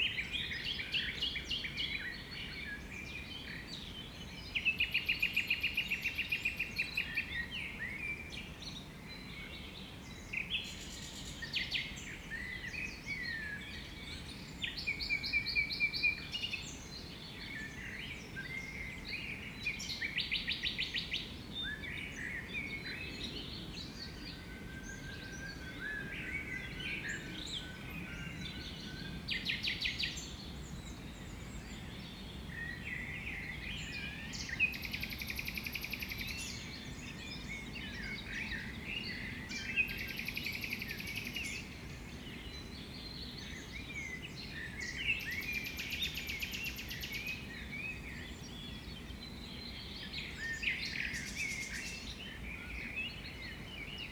Unnamed Road, Berlin, Germany - Dawn chorus with fox barks, a nightingale, blackbirds and other species
Dawn chorus day.